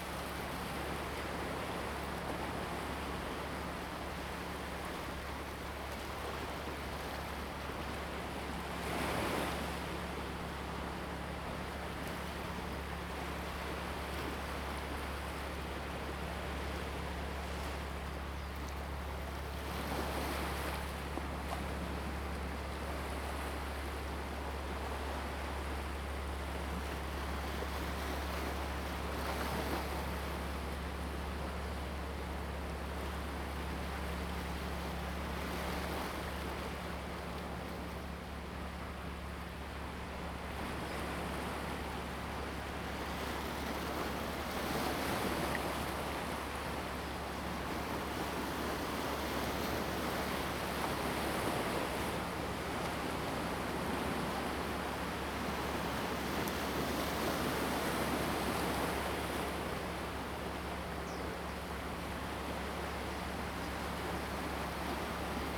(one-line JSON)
{"title": "龍門村, Huxi Township - sound of the Waves", "date": "2014-10-21 11:19:00", "description": "At the beach, sound of the Waves\nZoom H2n MS+XY", "latitude": "23.55", "longitude": "119.68", "altitude": "6", "timezone": "Asia/Taipei"}